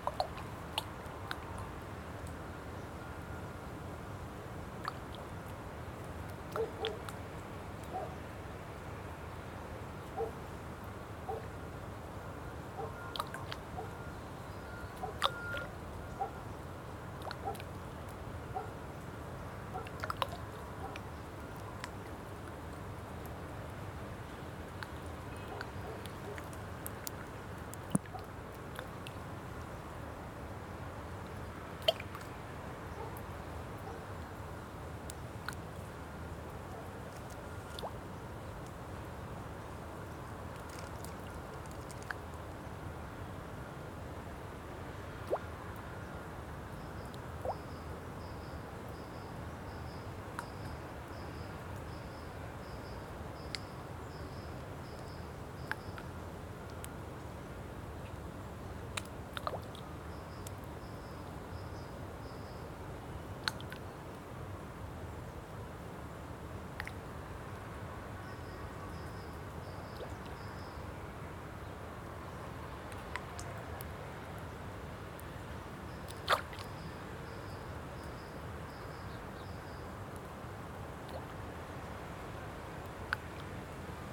Avigdor HaMeiri St, Jerusalem - Botanical Garden Jerusalem
Botanical Garden Jerusalem
Water, Highway in distance, Dog barking in distance.